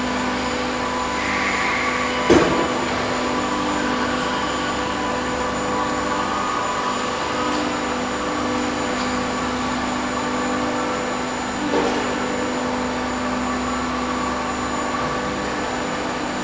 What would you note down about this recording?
Harmonically beautiful and complex factory ambience. Recorded with Roland R-26 using two of the built in microphones in XY configuration. Industrial sized 3D printers work night and day producing ever-changing products in a vast hangar style space.